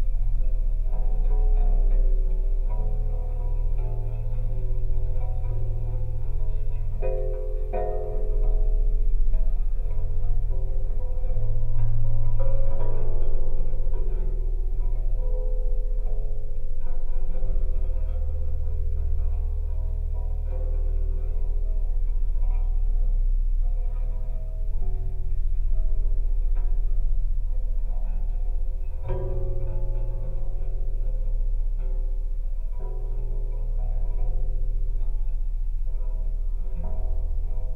Vosgeliai, Lithuania, cemetery gate
metalic detail on cemetery gate.